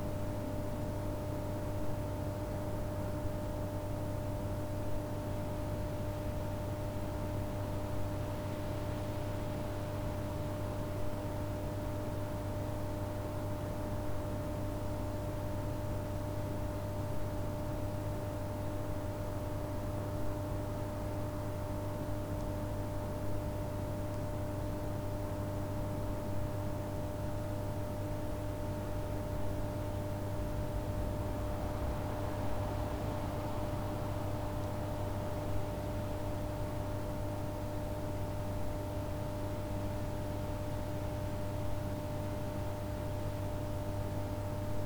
berlin, friedelstraße: stromkasten - the city, the country & me: electrical pillar box
the city, the country & me: october 26, 2011